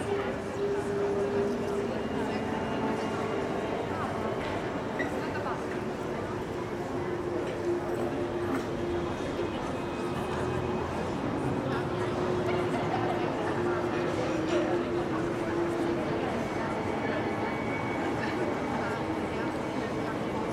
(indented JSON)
{
  "title": "Museums Quartier main yard, Vienna",
  "date": "2011-08-16 16:28:00",
  "description": "people and sound art in the MQ on a sunny afternoon",
  "latitude": "48.20",
  "longitude": "16.36",
  "altitude": "189",
  "timezone": "Europe/Vienna"
}